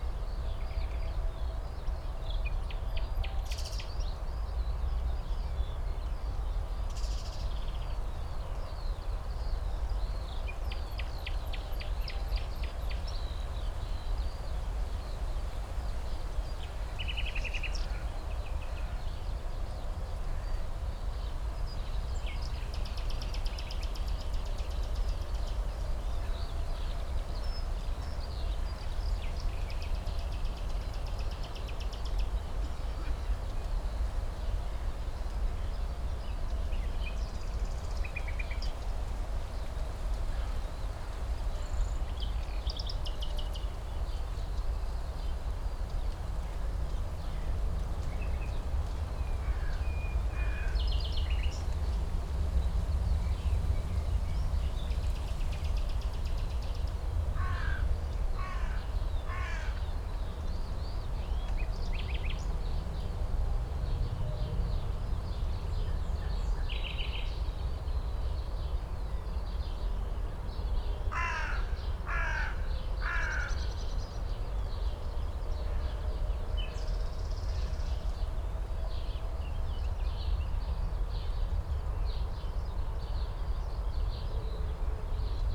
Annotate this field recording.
a morning in spring, at the poplar trees, with field larcs, dun crows, a nightingale and others, (Sony PCM D50, DPA4060)